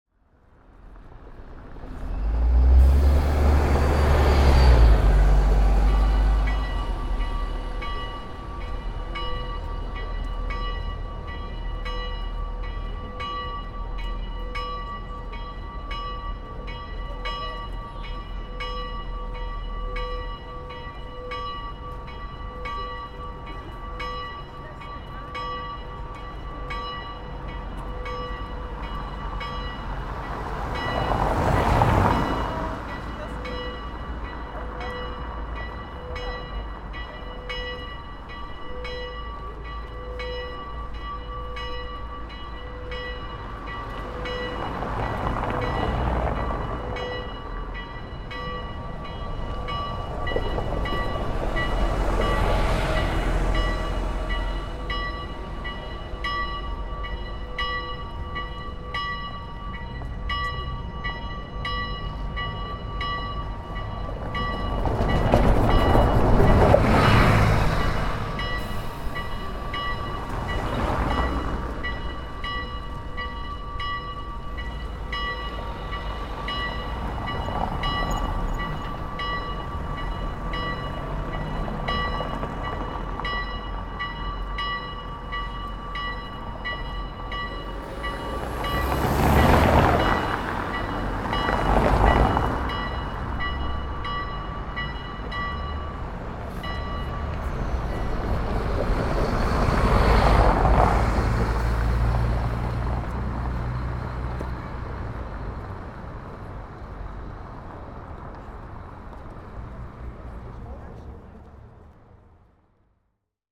Krakowskie Przedmieście, Warsaw, Poland - Evening traffic an church bells ringing
Sound Devices MixPre6, AT BP 4025 stereo mic and a pair od DPA 4060.